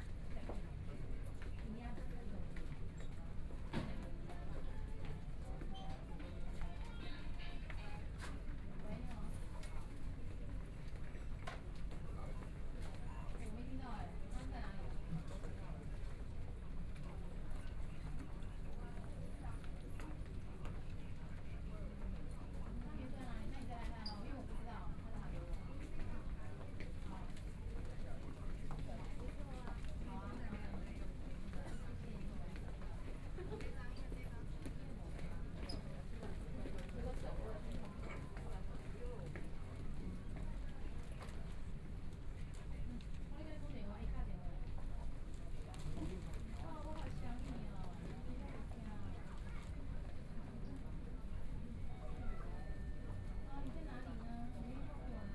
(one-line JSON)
{"title": "Mackay Memorial Hospital, Taipei City - Collar counter drugs", "date": "2014-01-20 17:09:00", "description": "In the hospital, Collar counter drugs, Binaural recordings, Zoom H4n+ Soundman OKM II", "latitude": "25.06", "longitude": "121.52", "timezone": "Asia/Taipei"}